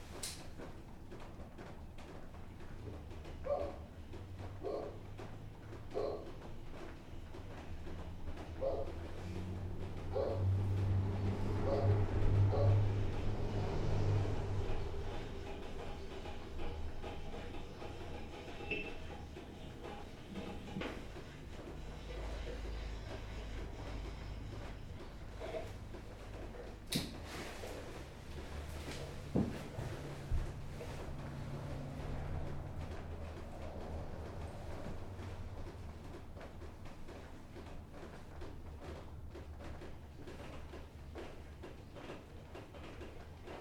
This recording was done on December 31st 2009 with a stereo pair of condenser microphones, a contact mic, and a bullhorn. The house was abandoned and boarded up after a fire.
Detroit, MI, USA